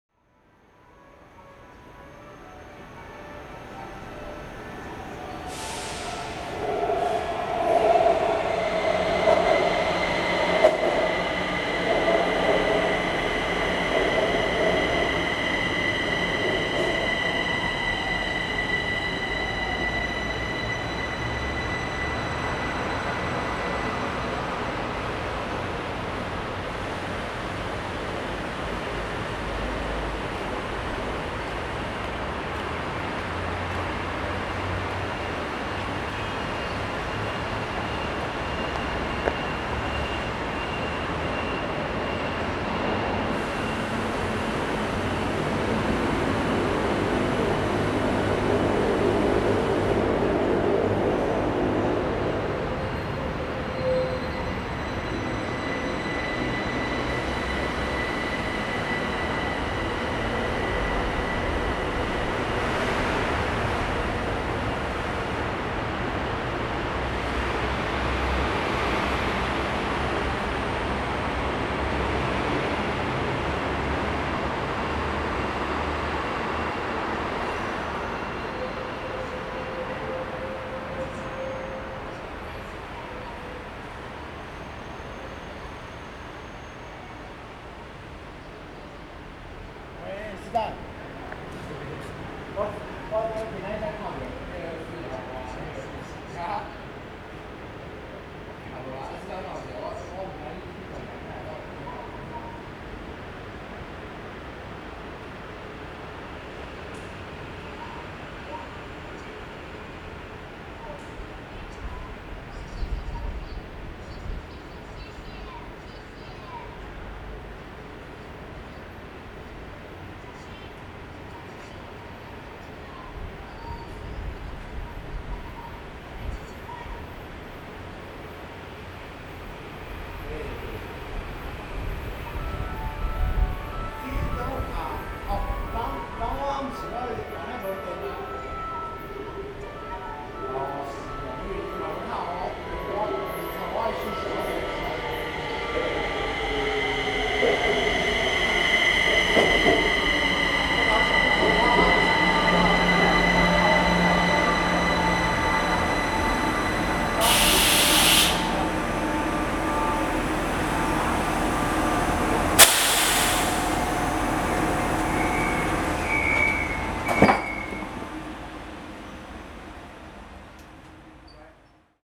Metropolitan Park Station - In the station platform
In the station platform, Sony ECM-MS907, Sony Hi-MD MZ-RH1
高雄市 (Kaohsiung City), 中華民國, 29 March 2012